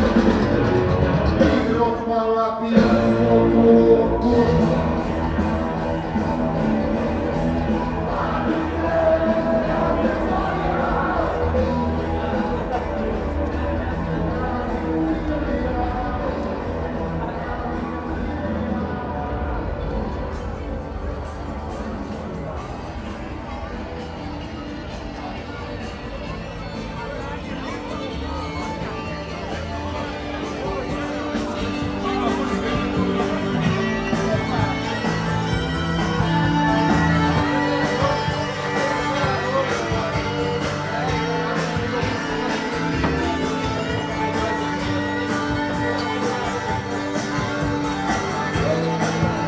Rijeka, Croatia - Intro Outro 2017 - BEWARE LOUD AT 2:45min
Just walking through town on last day of 2016.
Radio Aporee 10 years celebration :)